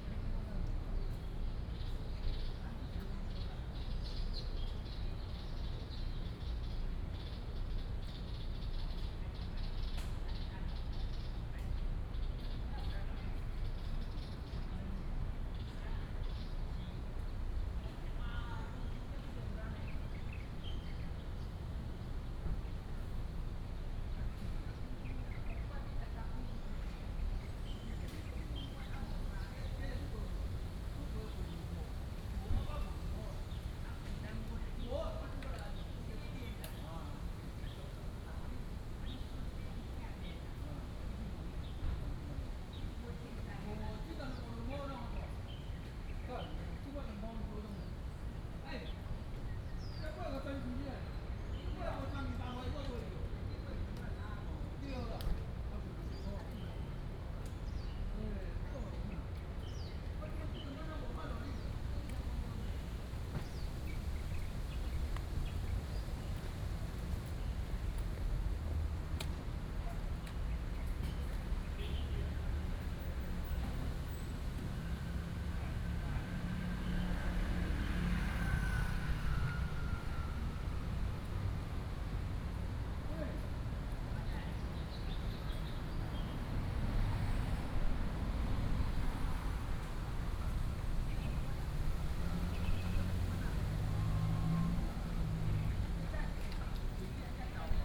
敦親公園, Da'an Dist., Taipei City - in the Park
in the Park, Very hot weather, Bird calls, Traffic noise
28 June 2015, 17:50